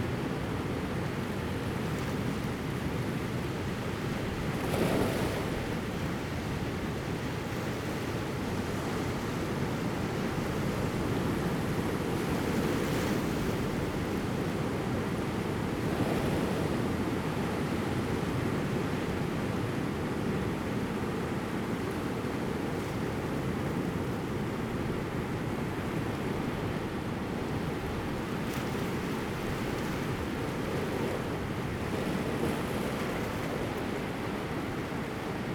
{"title": "興昌村, Donghe Township - At the seaside", "date": "2014-09-06 11:26:00", "description": "At the seaside, Sound of the waves, Fighter flying through, Very hot weather\nZoom H2n MS+ XY", "latitude": "22.89", "longitude": "121.25", "altitude": "3", "timezone": "Asia/Taipei"}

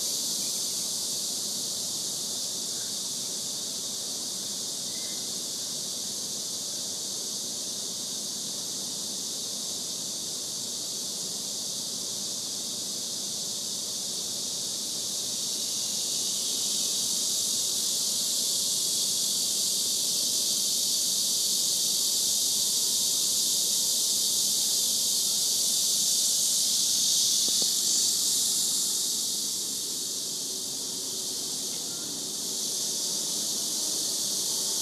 {"title": "Cloud Cave, Xuhui, Shanghai, China - Cicada Concert", "date": "2016-07-27 09:30:00", "description": "It's summer. And with it comes the deafening sound of Cicadas. Without it, it would not be summer. At least not here, not now.", "latitude": "31.20", "longitude": "121.45", "altitude": "13", "timezone": "Asia/Shanghai"}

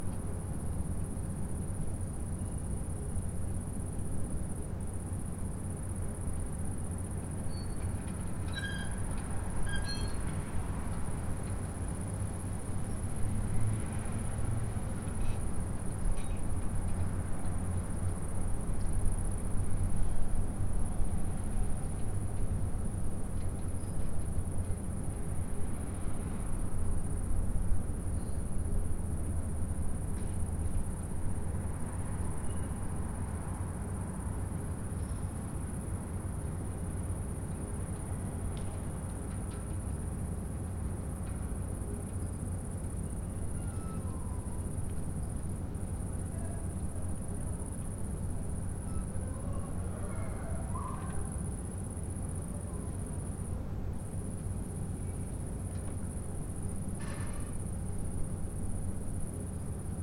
{"title": "Ulica Heroja Šlandra, Maribor, Slovenia - corners for one minute", "date": "2012-08-22 22:55:00", "description": "one minute for this corner: Ulica Heroja Šlandra 10", "latitude": "46.56", "longitude": "15.65", "altitude": "269", "timezone": "Europe/Ljubljana"}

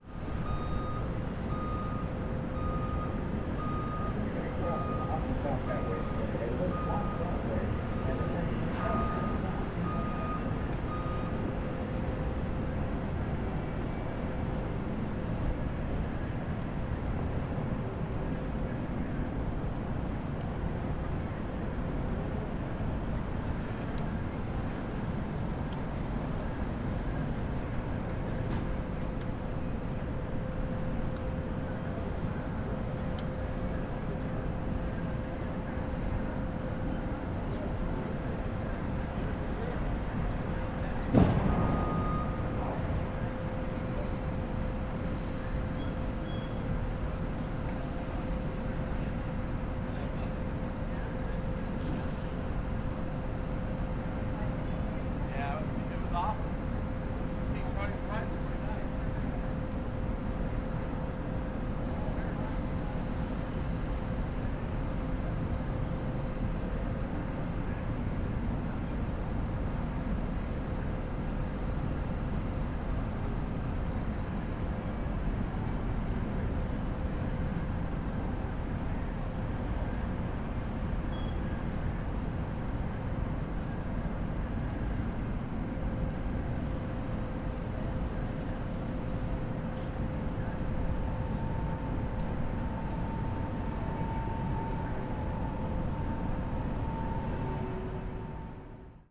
{"title": "Ryman Auditorium, Nashville, Tennessee, USA - Ryman Auditorium", "date": "2022-03-14 13:28:00", "description": "Outside Ryman Auditorium. Home of the Grand Ole Opry and birthplace of Bluegrass music.", "latitude": "36.16", "longitude": "-86.78", "altitude": "138", "timezone": "America/Chicago"}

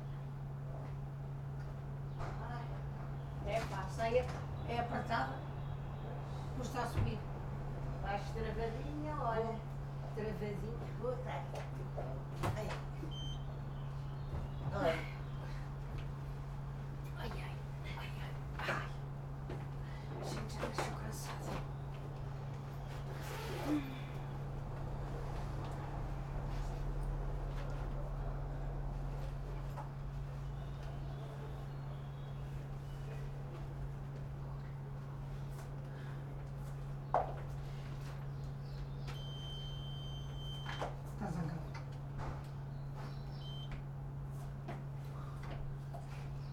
{"title": "lisbon, calcada do lavra - cable car", "date": "2010-07-01 12:55:00", "description": "people waiting for departure. almost 100y old tram climbs up this very steeply part of the street.", "latitude": "38.72", "longitude": "-9.14", "altitude": "53", "timezone": "Europe/Lisbon"}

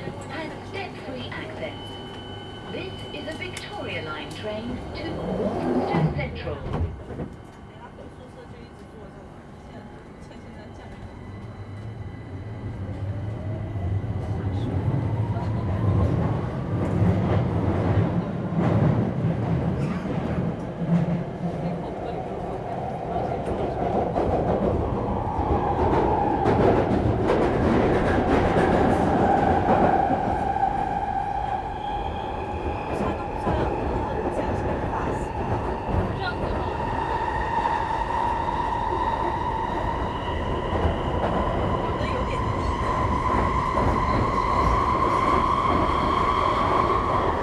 {"title": "Underground on a Victoria Line tube train, London, UK - Schreech and speed; tube from KingsX to Highbury", "date": "2018-02-08 14:24:00", "description": "Sitting on the tube and contemplating the sounds. This is one of the most noisy stretches in London.", "latitude": "51.54", "longitude": "-0.11", "altitude": "32", "timezone": "Europe/London"}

